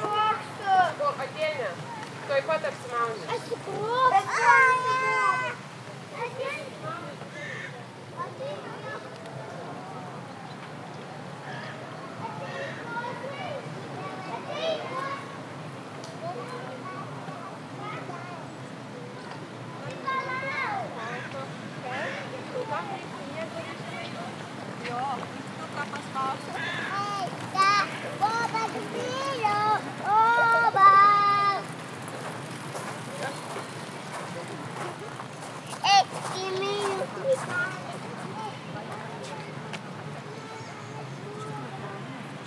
Lithuania - Playground near the Lagoon
Recordist: Liviu Ispas
Description: Near the lagoon besides a kids playground. Children playing, people taking, water sounds, birds and bikes passing by. Recorded with ZOOM H2N Handy Recorder.